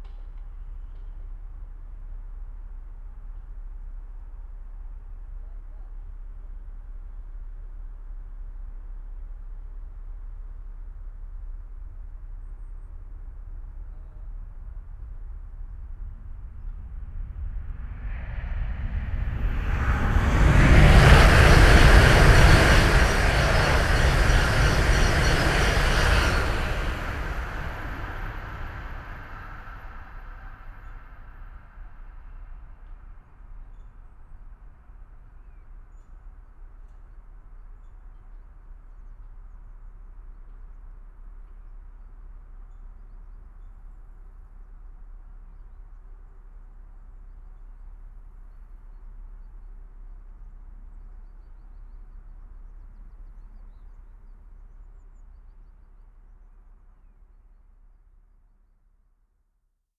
ICE station, Limburg an der Lahn, Deutschland - high speed train
ICE train passing-by at high speed
(Sony PCM D50, DPA4060)
Limburg, Germany